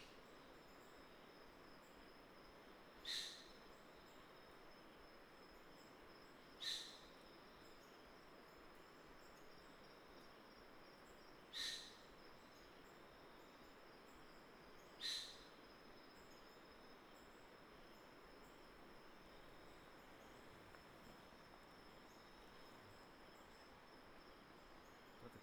Tuban, Daren Township, 台東縣 - Follow the Aboriginal Hunters
Stream sound, Follow the Aboriginal Hunters walking along the old trail, Footsteps, goat sound